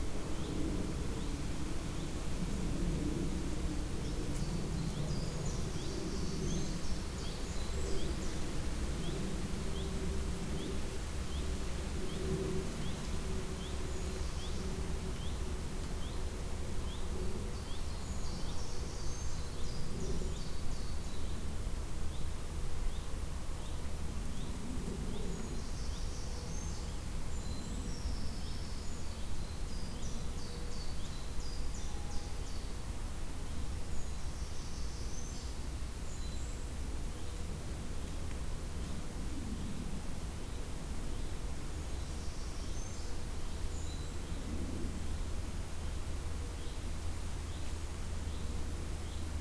World Listening Day - Woodland Ambience with birdsong, wind rustling in trees, jet passing overhead.
18 July 2010, 17:01